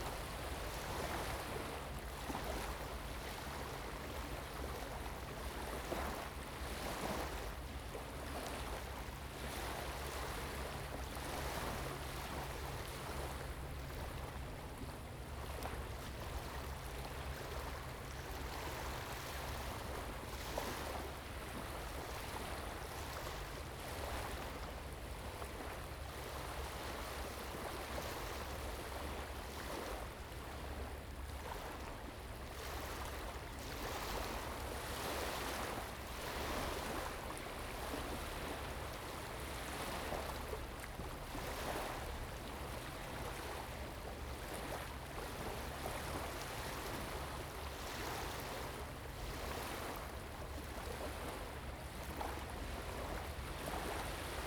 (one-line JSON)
{"title": "沙港東漁港, Huxi Township - Sound of the waves", "date": "2014-10-22 07:59:00", "description": "At the beach, Sound of the waves\nZoom H2n MS+XY", "latitude": "23.61", "longitude": "119.62", "altitude": "4", "timezone": "Asia/Taipei"}